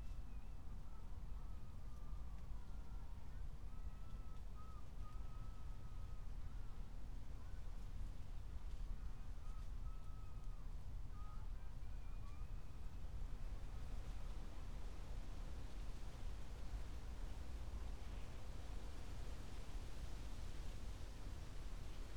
Berlin, Tempelhofer Feld - former shooting range, ambience
22:03 Berlin, Tempelhofer Feld
Deutschland, 1 June 2020